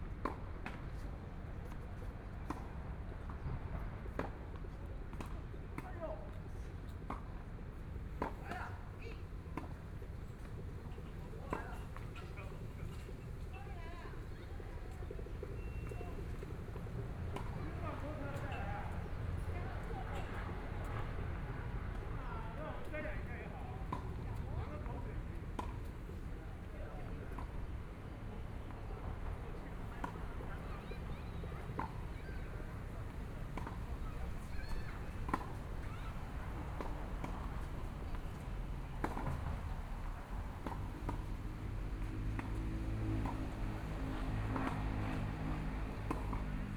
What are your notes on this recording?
Sitting next to tennis courts, in the Park, Distant school students are practicing traditional musical instruments, Aircraft flying through, Traffic Sound, Construction noise, Binaural recordings, Sony PCM D100 + Soundman OKM II